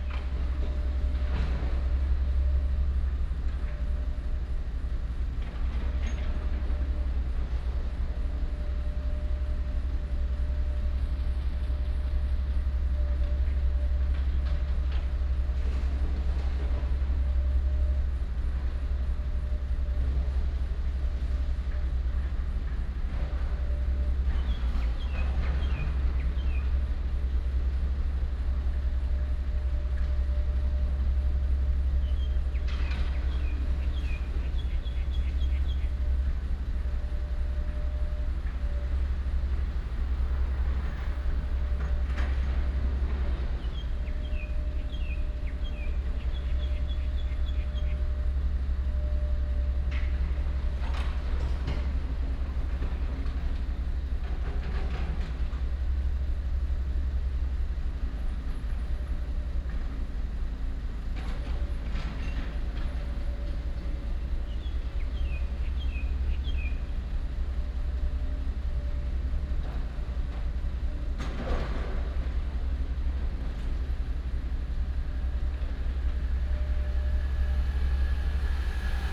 Ln., Jinhua St., East Dist., Hsinchu City - In the alley
In the alley, The sound of birds, Demolition of old house, traffic sound, Binaural recordings, Sony PCM D100+ Soundman OKM II
Hsinchu City, Taiwan, 6 October